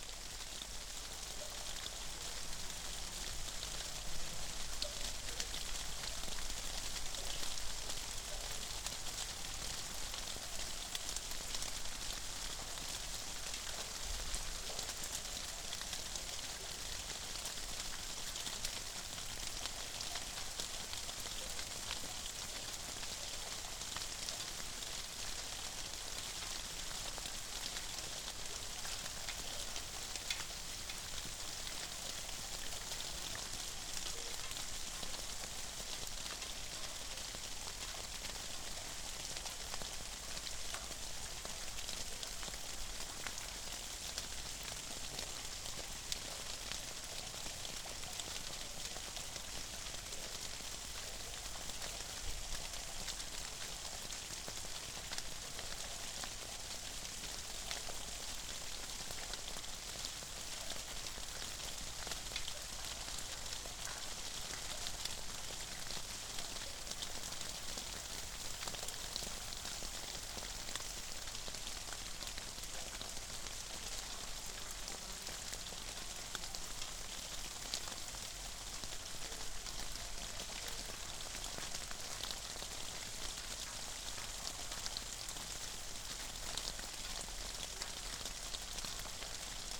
Old watertower after a rain. It leaks water from above... One day it will surely fall down and hopefully I will not stay near recording..